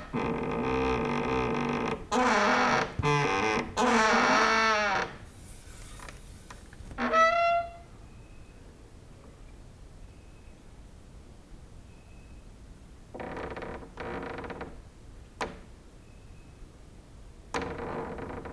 Mladinska, Maribor, Slovenia - late night creaky lullaby for cricket/5
cricket outside, exercising creaking with wooden doors inside
11 August 2012, 23:54